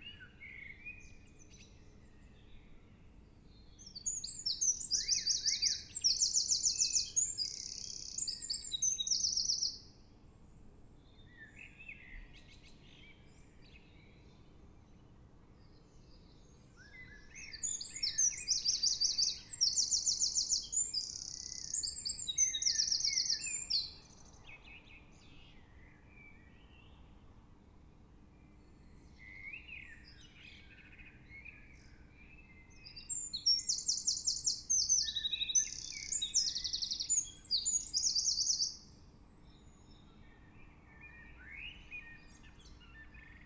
Katesgrove, Reading, UK - Dawn Chorus
The birds were singing so loudly I couldn't sleep, so I decided to record them instead, by suspending a pair of Naiant X-X microphones out of the window.
May 2016